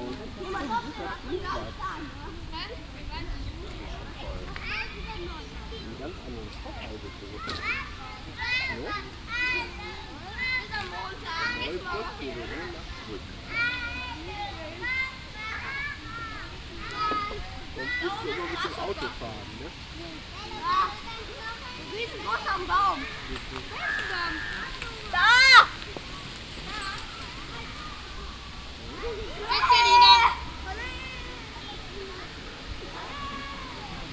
cologne, römerpark, playground
soundmap nrw: social ambiences/ listen to the people in & outdoor topographic field recordings
4 August 2009, ~16:00